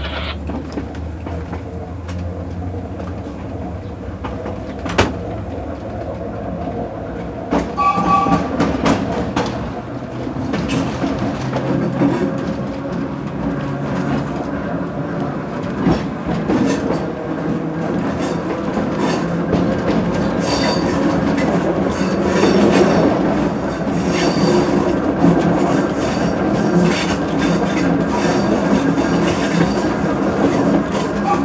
Spain, Bunyola, Ferrocarril de Sóller - Vents ferroviaires / Rail winds (2)
17 April, 18:06